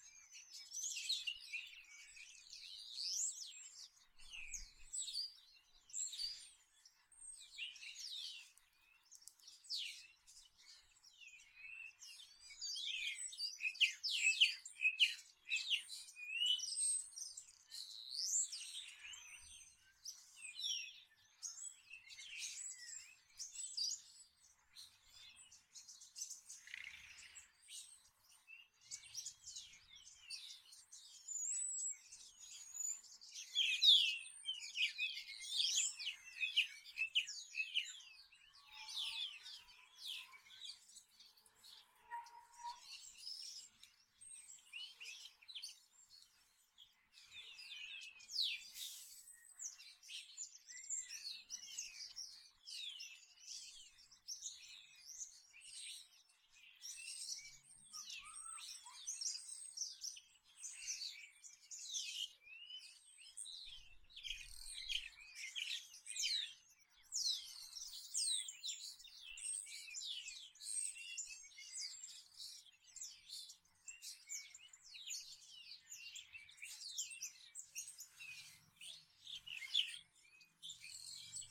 Birds Belém, Lisboa, Portugal - Birds of Confinement
Birds on trees, Belém, weekend confinement. Recorded on a zoom H5 with a HSX6 XY stereo capsule and isotope RX treatment.